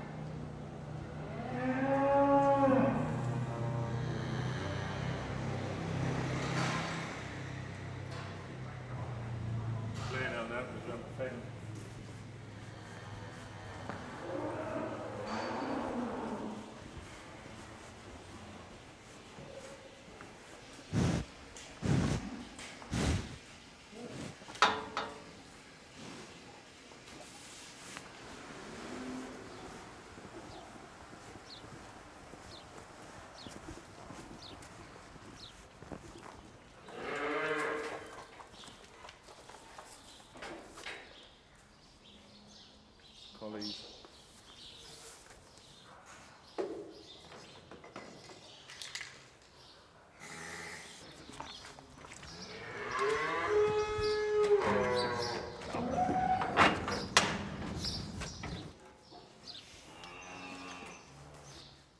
Penrith, UK - Farm noises
In the sheds of a large dairy and sheep farm.
North West England, England, United Kingdom, 17 May 2022, 10:51